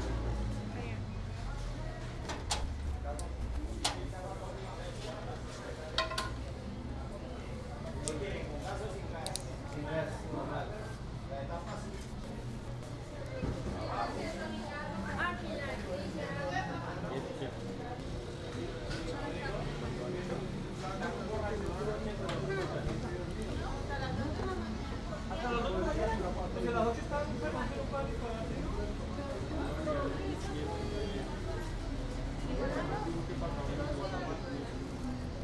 Apulo, Cundinamarca, Colombia - Apulo Marketplace
Sound-walk through Apulo's streets. The recording was taken the morning after the local feasts and a hangover silence or a tense stillness can be perceived on the audio file. The journey begins on a small shop, take us across a couple of commercial streets and finally arrives to the marketplace.
6 January 2013